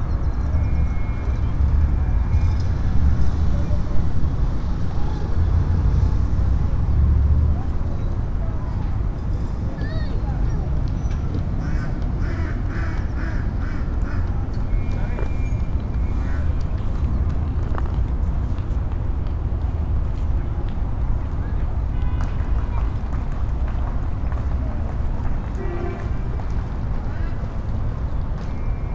Hacienda del Campestre, Hacienda del Campestre, León, Gto., Mexico - Parque de Los Cárcamos, caminando despacio desde el lago a la puerta de Adolfo López Mateos.
Parque de Los Cárcamos, walking slowly from the lake to Adolfo López Mateos’ door.
I made this recording on october 2nd, 2021, at 1:46 p.m.
I used a Tascam DR-05X with its built-in microphones and a Tascam WS-11 windshield.
Original Recording:
Type: Stereo
Esta grabación la hice el 2 de octubre de 2021 a las 13:46 horas.
2 October 2021, 13:46